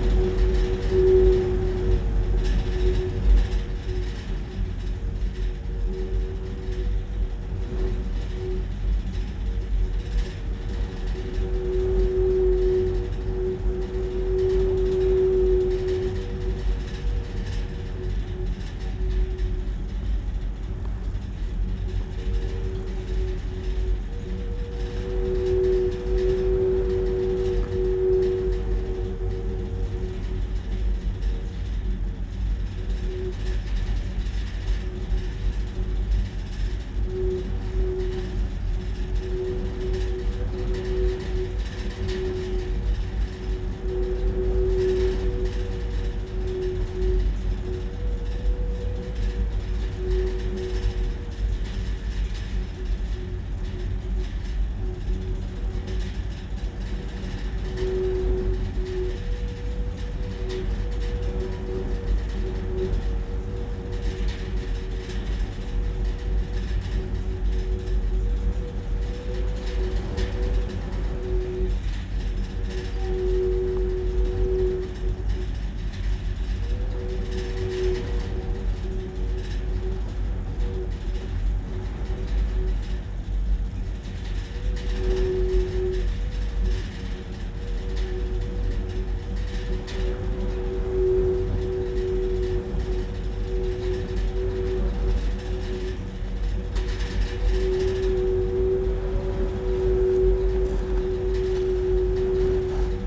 The army firing range fence singing and rattling in strong winds, Romney Marsh, UK - The army firing range fence singing and rattling in strong winds
This a desolate spot, army firing ranges on grey shingle banks that reach to the power station. There is a metal fence with occasional steel gates bearing warning signs of danger to life, noise, unexploded ordinance. Red flags fly to let you know when the ranges are being used. The wind often blows and today is strong enough to make the wire of the gate rattle and sing eerily. 24/07/2021
England, United Kingdom, 24 July 2021